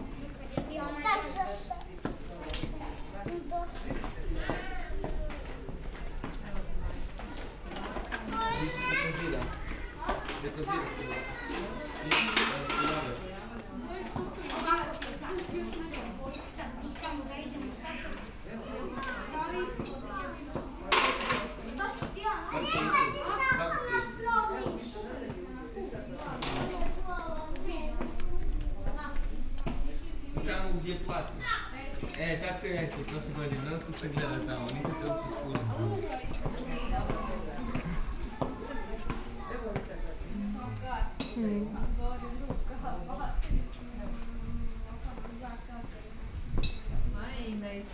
sounds in a narrow Dalmatian street - everyday life
childrens' voices, sounds coming from an open window
Croatia, 28 August